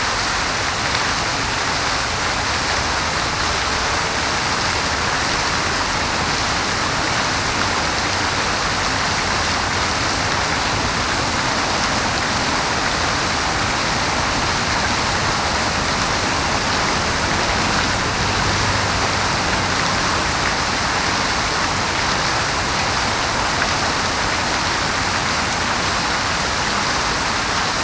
Saint-Germain-l'Auxerrois, Paris, France - Fontaine des Fleuves
Fontaine des Fleuves, place de la Concorde, 75001 Paris
Jacques Hittorff, 1840
26 November 2015, 20:00